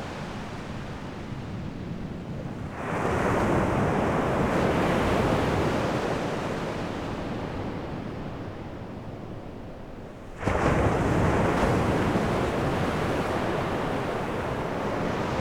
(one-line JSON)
{"title": "Kilkeel Beach 3", "description": "A little bit further away from the Ocean.", "latitude": "54.06", "longitude": "-6.00", "altitude": "7", "timezone": "Europe/London"}